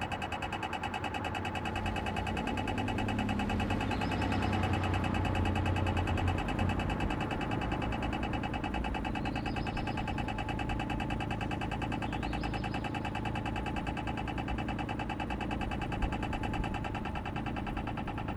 Robert Schumanplein Brussels, Klanklandschap#2 (BAS)
Brussels, Belgium